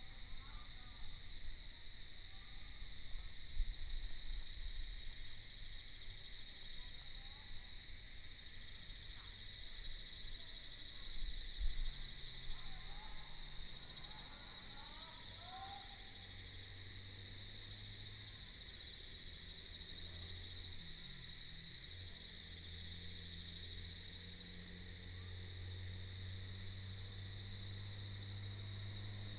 199縣道4K, Mudan Township, Pingtung County - Mountain road
Beside the road, The sound of cicadas, Mountain road, Cycling team, Traffic sound
2 April 2018